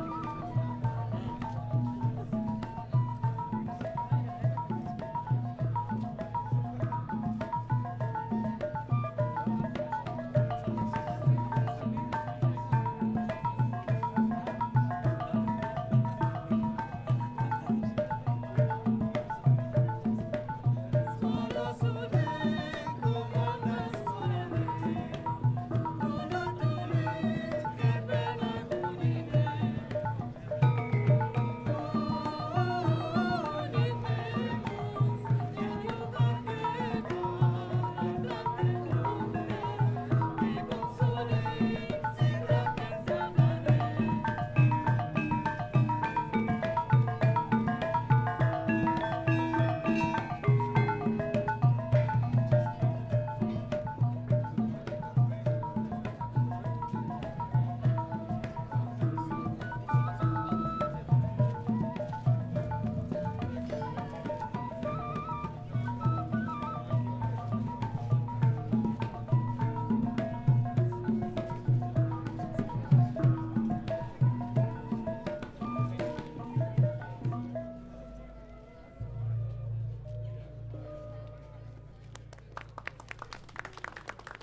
Concert of a gamelan ensemble in the back yard of an abandoned hospital in the evening of the opening day of Biennale Mediations
Poznan, Poland, 11 September 2010, 21:01